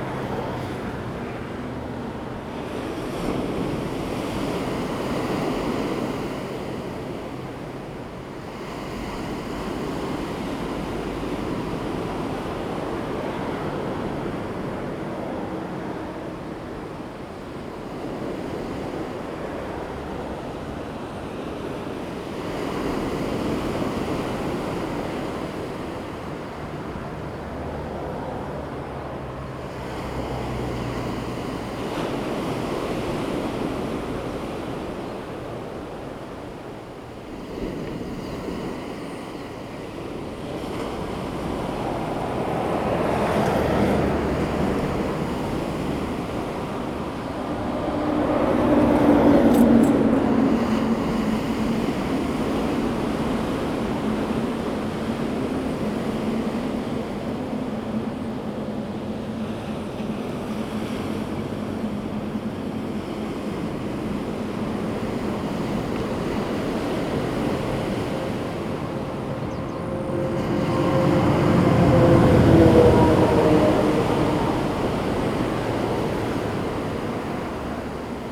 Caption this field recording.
Coast on the highway, Bird cry, Sound of the waves, Traffic sound, Zoom H2n MS+XY